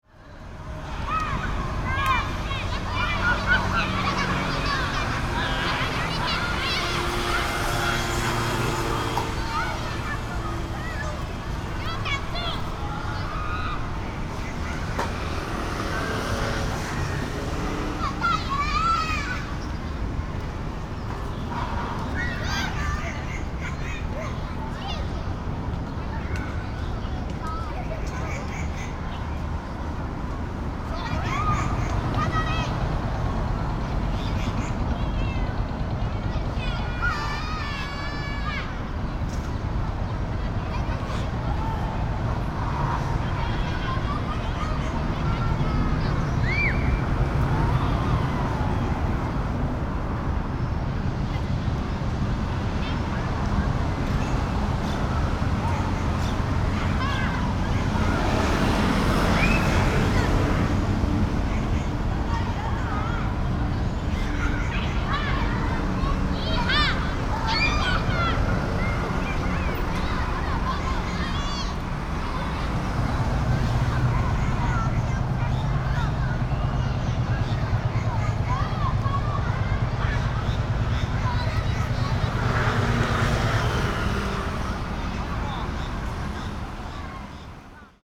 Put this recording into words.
Standing next to Elementary school, Students are playing games, Construction noise from afar.Sony PCM D50